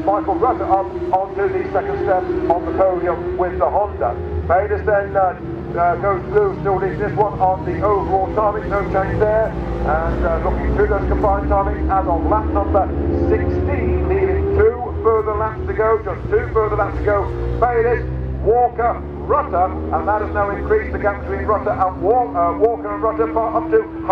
BSB 1998 ... Superbikes ... Race 2 ... commentary ... one point stereo mic to minidisk ... date correct ... time optional ...